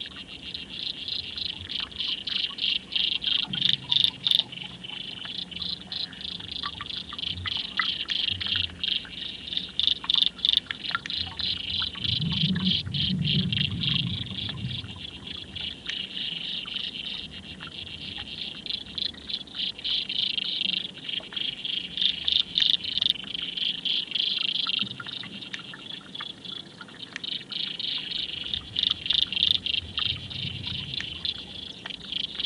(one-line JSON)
{"title": "Maryhill Locks, Glasgow, UK - The Forth & Clyde Canal 004: Corixidae (water boatmen)", "date": "2020-07-02 18:27:00", "description": "Recorded with a pair of Aquarian Audio H2a hydrophones – socially distanced at 2m in stereo. Left & right channel hydrophones at varying depths under the canal jetty. Recorded with a Sound Devices MixPre-3", "latitude": "55.89", "longitude": "-4.30", "altitude": "36", "timezone": "Europe/London"}